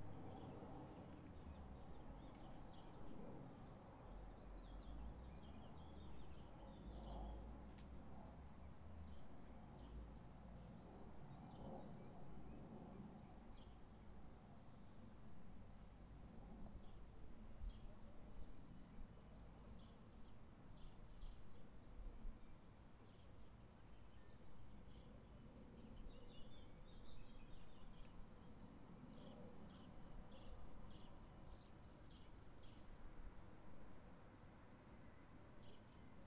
Krems an der Donau, Österreich - between Stadtpark & the city
the sounds of birds from the Stadtpark mix beautifully with the machine- & manmade sounds of a busy day in Krems